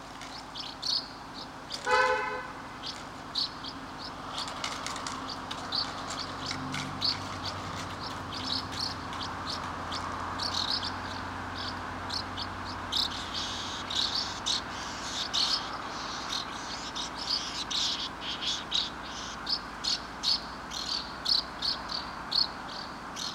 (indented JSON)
{
  "title": "Rue du Vieux Bourg, Sauveterre-la-Lémance, France - Swallows - Hirondelles",
  "date": "2022-08-21 18:30:00",
  "description": "Tech Note : Sony PCM-M10 internal microphones.",
  "latitude": "44.59",
  "longitude": "1.01",
  "altitude": "502",
  "timezone": "Europe/Andorra"
}